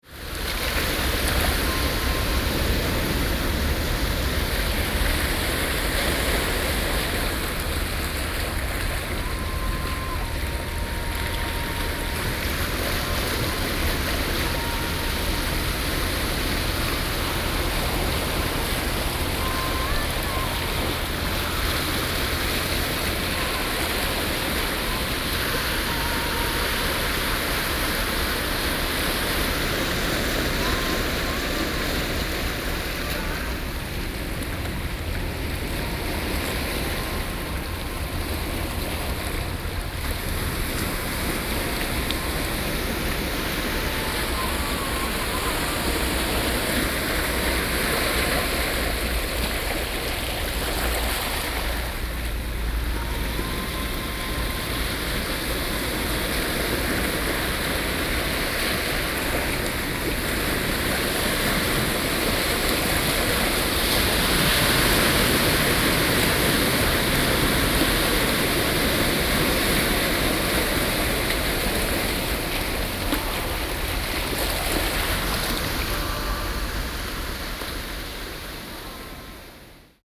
中角海濱公園, Jinshan Dist., New Taipei City - The sound of the waves
Waves, Behind the restaurant music, Sony PCM D50 + Soundman OKM II
New Taipei City, Taiwan, 25 June 2012, 18:18